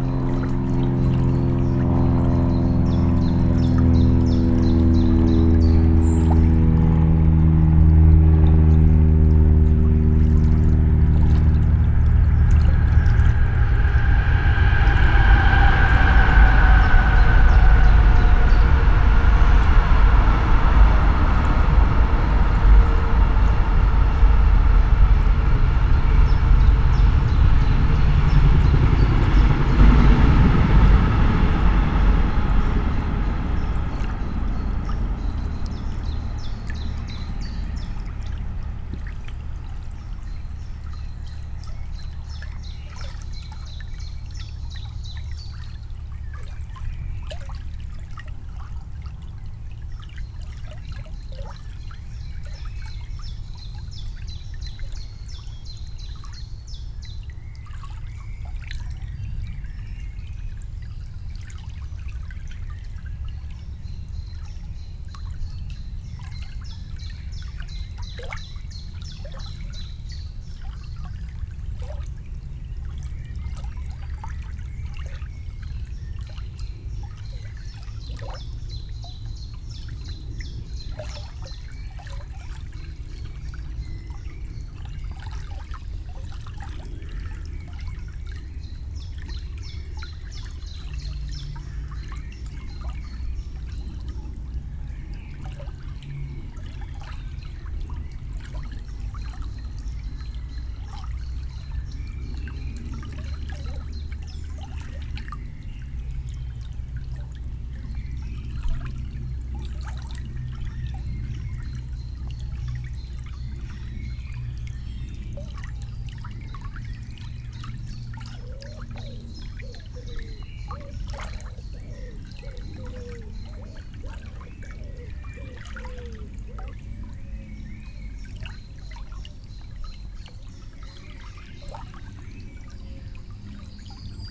Chastre, Belgique - Orne river
A plane, a train going to Namur and a quiet river called Orne.